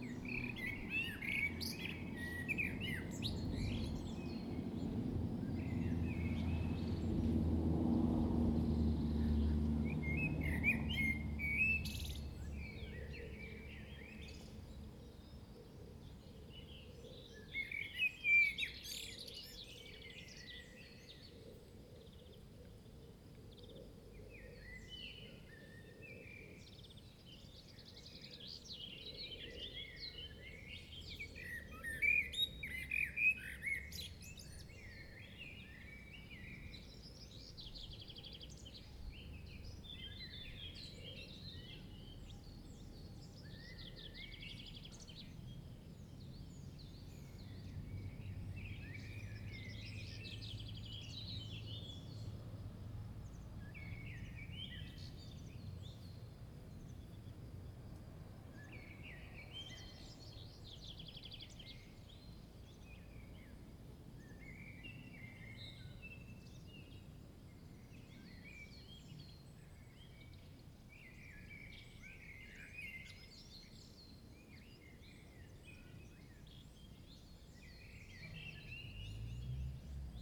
Chem. de Molle, Le Chambon-sur-Lignon, France - Around the pond
Nice pond in this village, sounds of nature. Recorded with a Zoom h2n.
France métropolitaine, France, May 2022